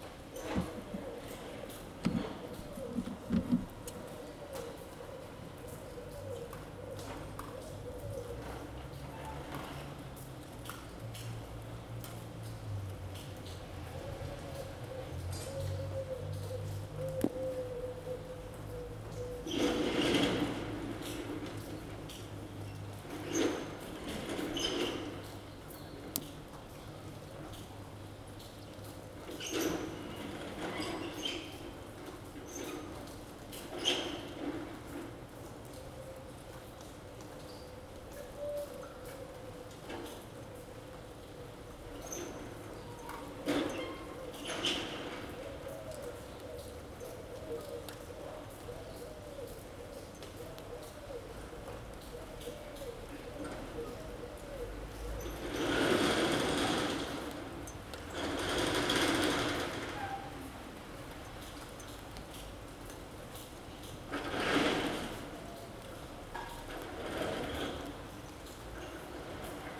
Carrer de Joan Blanques, Barcelona, España - Rain23032020BCNLockdown
Rain field recording made from a window during the COVID-19 lockdown.
Barcelona, Catalunya, España, 23 March 2020